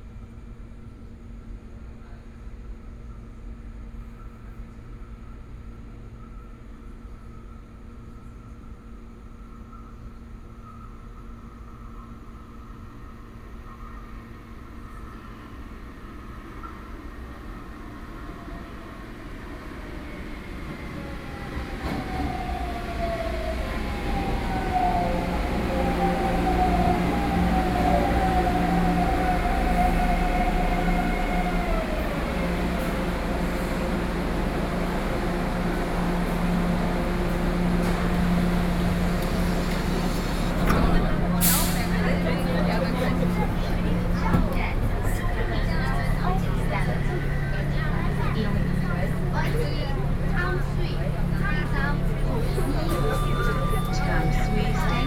Fu Hsing Kang MRT station - Waiting